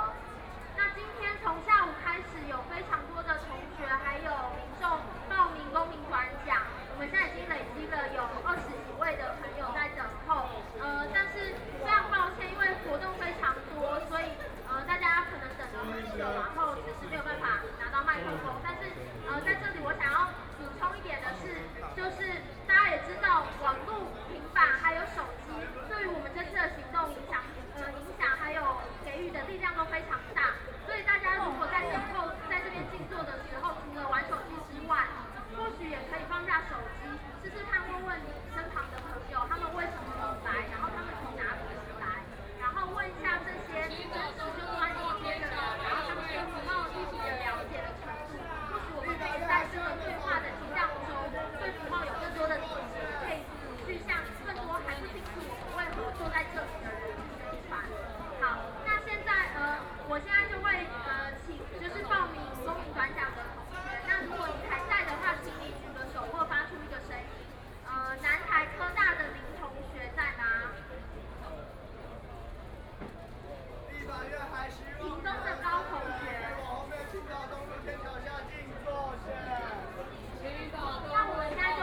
Qingdao E. Rd., Taipei City - Student activism
Student activism, Walking through the site in protest, People and students occupied the Legislative Yuan